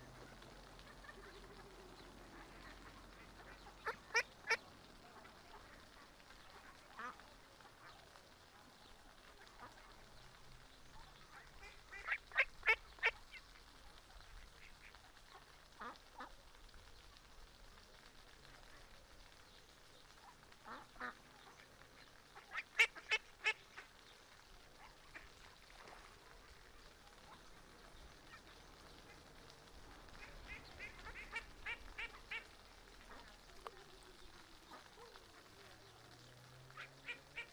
Paseo de la Senda, Griñón, Madrid, España - Tarde en el parque del río con patos
Domingo tarde en un parque muy chulo de Griñón donde hay un pequeño río donde viven patos. Los sonidos de aves que se escuchan son Ánade Real (Anas platyrhynchos). Hay de todas la edades, adultos, medianos y también patitos pequeños con sus mamás. Suele pasar mucha gente por la zona a darles de comer y suelen ponerse nerviosos cuando eso ocurre, deseando coger un trocito de comida. Cerca de nosotros había unos patitos adolescentes pidiéndonos comida. También se puede escuchar el sonido de los pequeños escalones en el río que hacen pequeñas cascadas, y una fuente grande con una tinaja de donde sale agua en cascada. La gente pasar... los niños emocionados con los patitos...
Comunidad de Madrid, España, June 2020